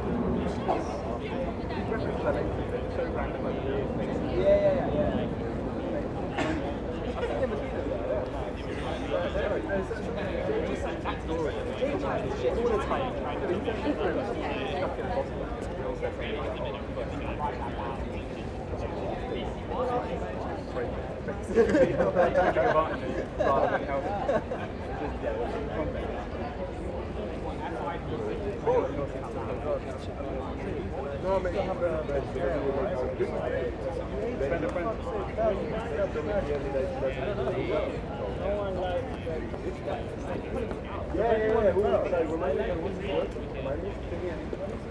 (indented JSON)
{
  "title": "Lunchtime crowds on beautiful day, Angel Ln, London, UK - Lunchtime crowds on a beautiful day",
  "date": "2022-05-17 12:33:00",
  "description": "Many come here to eat lunch beside the river, particularly on such a warm sunny day as this.",
  "latitude": "51.51",
  "longitude": "-0.09",
  "altitude": "18",
  "timezone": "Europe/London"
}